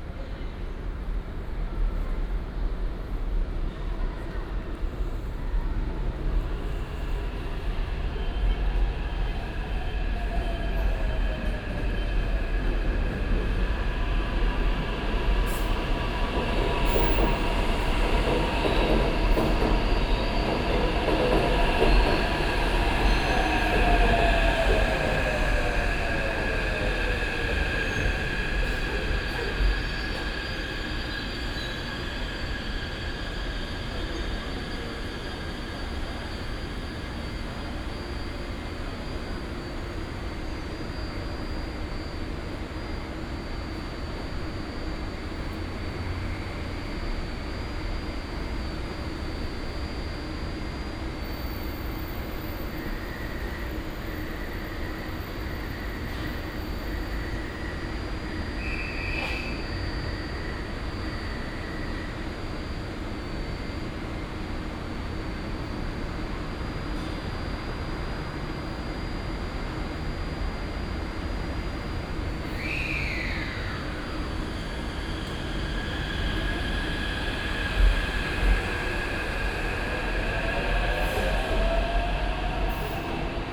Beitou Station, Taipei City - Walking at the station
Walking at the station, traffic sound, From the station hall to the platform